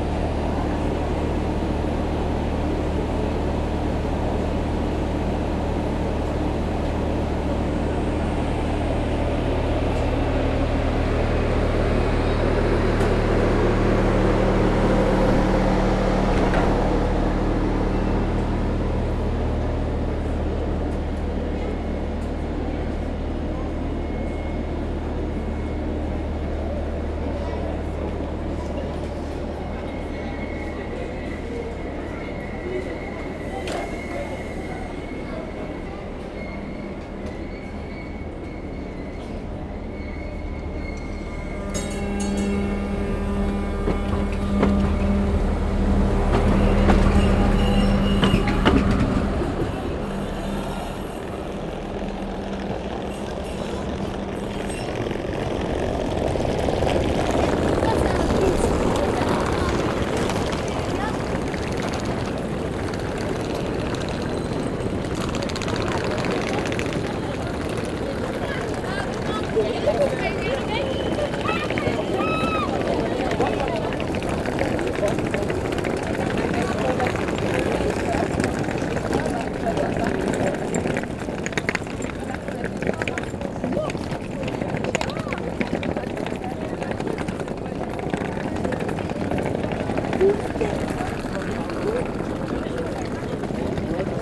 Charleroi, Belgium - Charleroi station
Walking in the Charleroi train station, and after in the Tramway station. Quite the same sounds as Flavien Gillié who was at the same place a year ago.